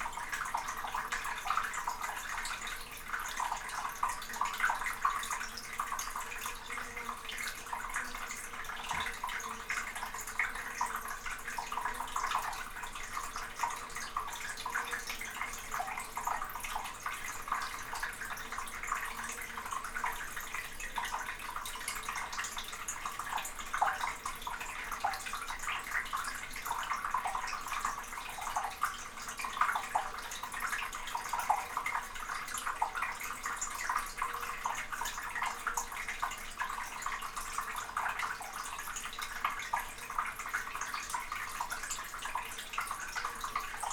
Povoa Das Leiras, Portugal, waterpipe - waterpipe
stereo microphone inside a concrete waterpipe, world listening day, recorded together with Ginte Zulyte